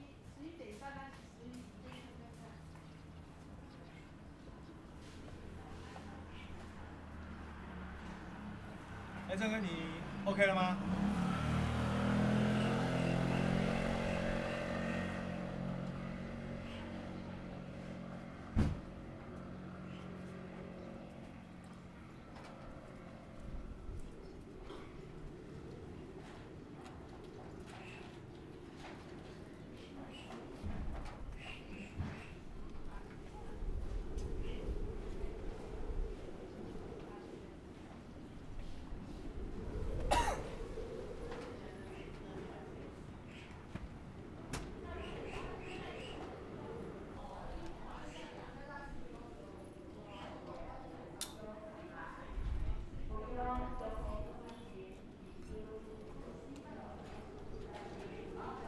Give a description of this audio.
The sound of the wind, On the streets of a small village, Zoom H6 MS